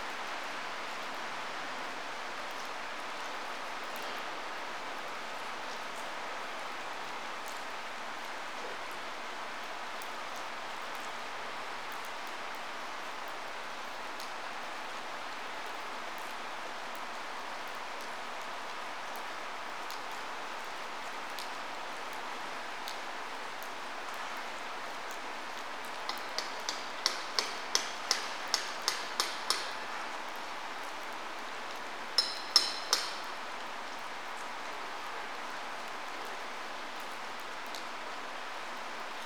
Middlebrook Ave, Staunton, VA, USA - Waiting for a Train
Once a busy station, Staunton is now a whistle stop. Recorded on a somewhat sweltering weekday afternoon about fifteen feet from the tracks and maybe ten times that distance to the Middlebrook Avenue. One can hear the sound of the original station building being renovated for the sake of a new business, traffic on the street, a gentle summer shower and its stillicide dripping from the canopy. In its heyday this was a bustling place. It may bustle again in the future, but for now it is like an eddy somewhat apart from the main stream of life and traffic through downtown, with only a freight train or two each day and six passenger trains each week.